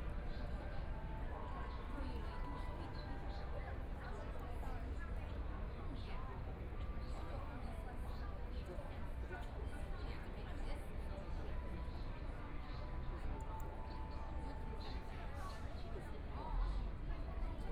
In the square outside the Taipei 101, Traffic Sound, Tourists
Binaural recordings
Zoom H4n+ Soundman OKM II